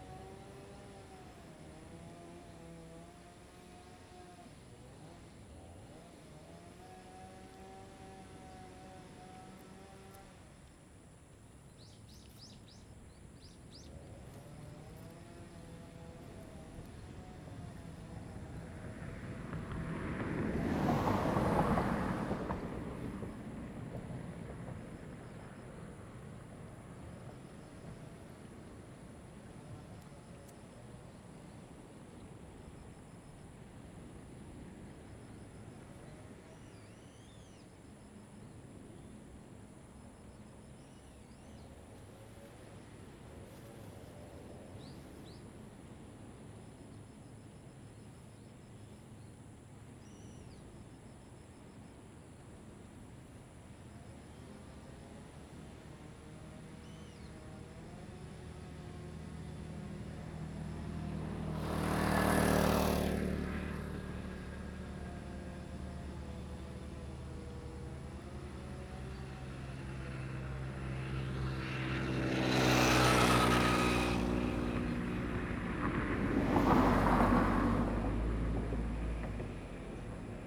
Traffic Sound, Next to the road, Farm equipment sound
Zoom H2n MS +XY